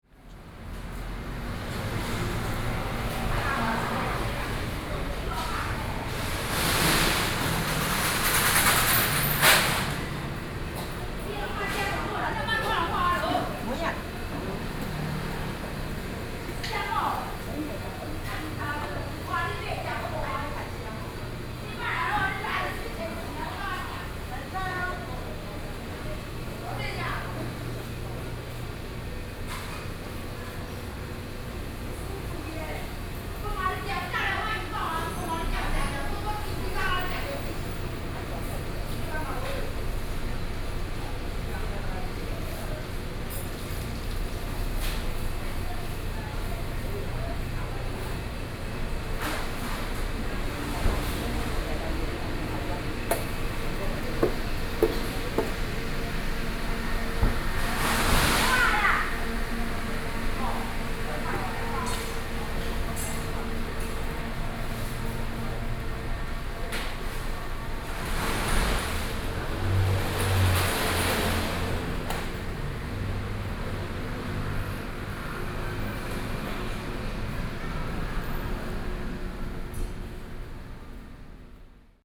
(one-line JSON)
{"title": "瑞芳區龍潭里, New Taipei City - In the Market", "date": "2012-06-05 17:50:00", "description": "In the Market, Binaural recordings, Sony PCM D50", "latitude": "25.11", "longitude": "121.81", "altitude": "63", "timezone": "Asia/Taipei"}